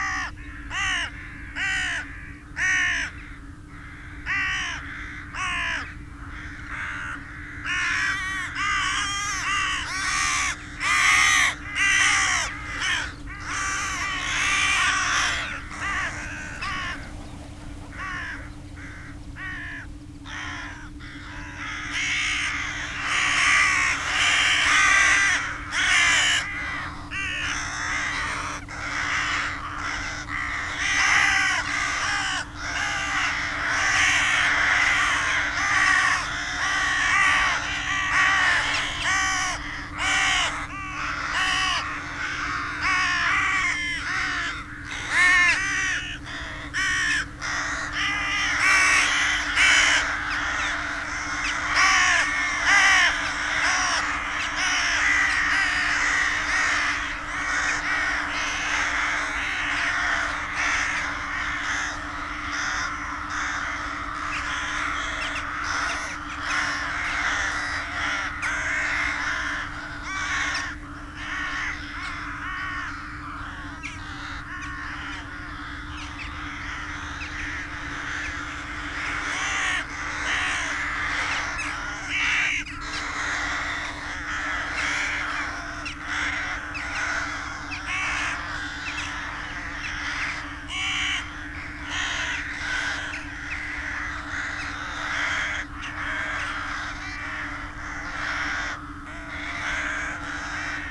Luttons, UK - crows and rook soundscape ...
Crows and rooks soundscape ... flock flying over then spiralling away ... open lavalier mics on clothes pegs clipped to sandwich box parked on field boundary ... background noise ...
Malton, UK, 2 December 2016